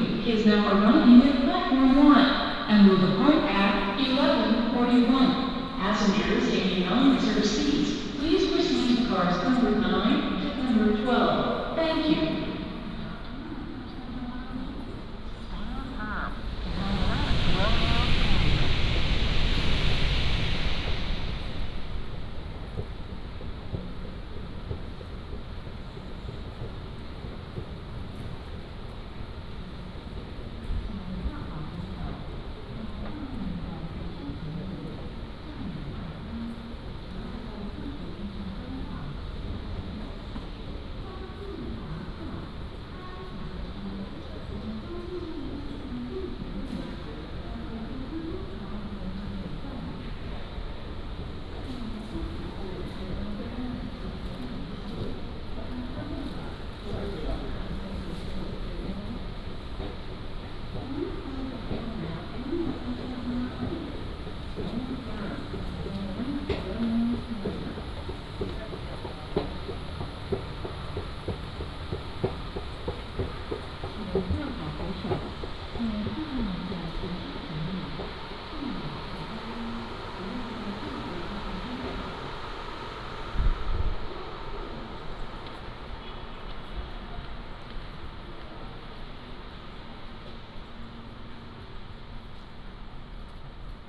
THSR Yunlin Station, Taiwan - Walking at the station
Station Message Broadcast, Walking at the station, From the station hall, To the station platform, Escalator